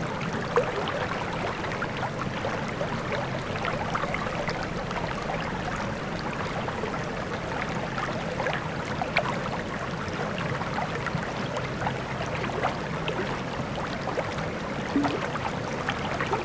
ZoomH4npro posé sur une pierre au milieu du Sierroz à son plus bas niveau suite à la sécheresse.
Auvergne-Rhône-Alpes, France métropolitaine, France, 30 July 2022, 10:50